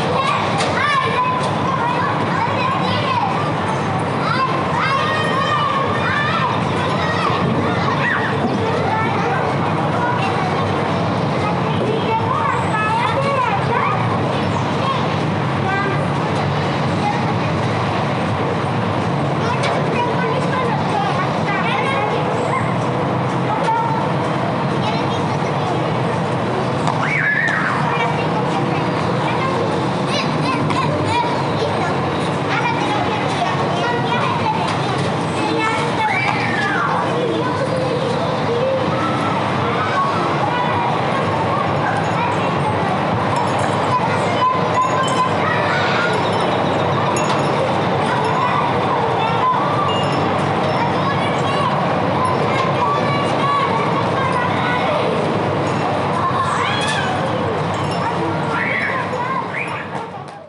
Av Calle, Bogotá, Colombia - Park in wetland in Bogota
Children's park in Bogota in a wetland Between 2 avenues with kids laughing and screaming, this place three fundamental sounds like the wind, light metal hits against floor (cars passing by fast) and traffic. We can hear also some sound signs like hanging bells that sound with strong wind, children scream, children's toys hitting the floor and a small car horn. Also for some sound marks, we can hear the kids voices, their steps, and birds in the wetland.